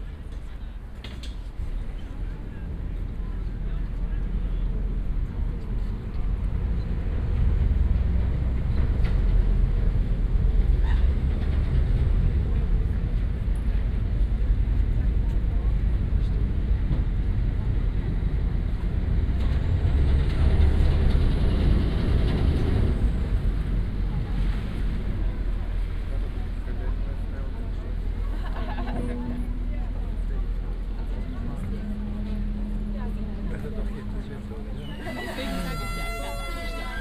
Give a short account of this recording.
Berlin Kladow, waiting for the public transport ferry to arrive, passengers leaving the boat, jetty ambience, (Sony PCM D50, OKM2)